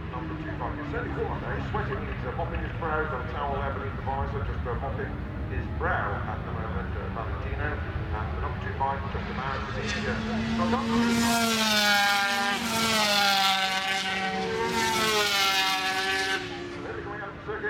Castle Donington, UK - British Motorcycle Grand Prix 2002 ... 500cc ...
500cc motorcyle warm up ... Starkeys ... Donington Park ... warm up and associated noise ... Sony ECM 959 one point stereo mic to Sony Minidisk ...
Derby, UK, 14 July 2002